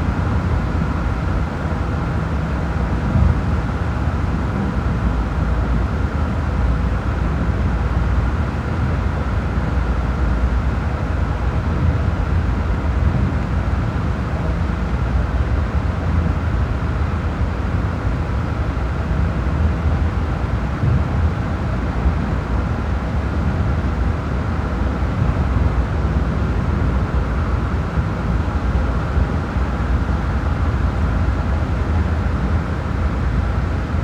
{"title": "Grevenbroich, Germany - Bucket wheel at work, deep bass thumps, alarm, conveyor belts st", "date": "2012-11-02 13:00:00", "description": "The machine that builds the massive coal mountains for storage before it is loaded on to trains. It produces some powerful low frequencies. Half way through the recording alarms sound for the start of the nearby conveyer belts.", "latitude": "51.07", "longitude": "6.54", "altitude": "72", "timezone": "Europe/Berlin"}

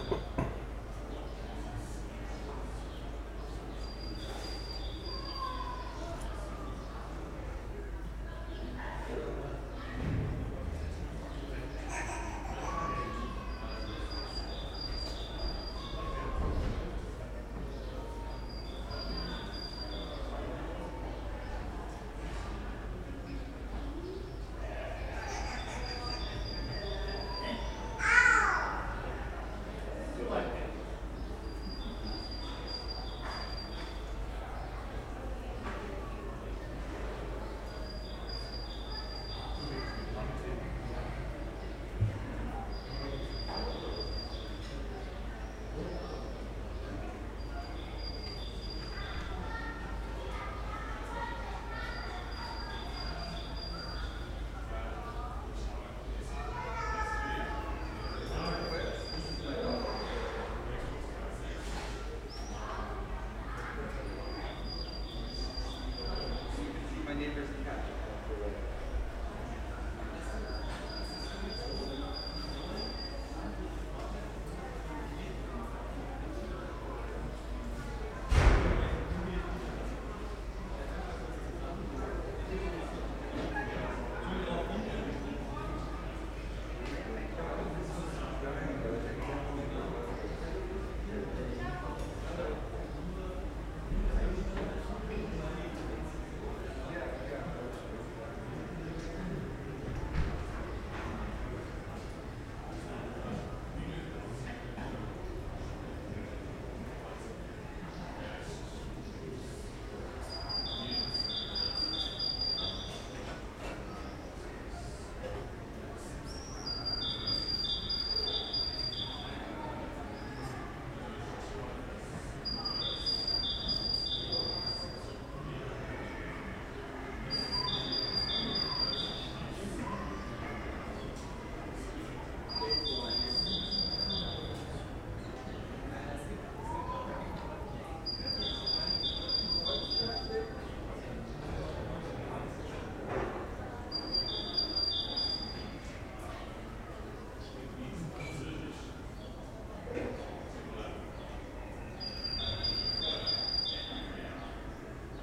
{"title": "Husemannstraße, Berlin, Germany - Second backyard, spring, birds", "date": "2014-05-25 19:16:00", "description": "Second backyard, spring evening, birds, tenants, distant city noise", "latitude": "52.54", "longitude": "13.42", "altitude": "60", "timezone": "Europe/Berlin"}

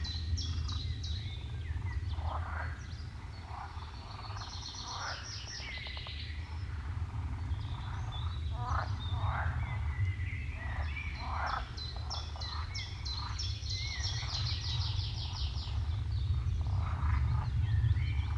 {"title": "Stabulankiai, Lithuania, plane over swamp", "date": "2020-05-04 17:35:00", "description": "swamp life and rare covid-19 plane over it", "latitude": "55.52", "longitude": "25.45", "altitude": "168", "timezone": "Europe/Vilnius"}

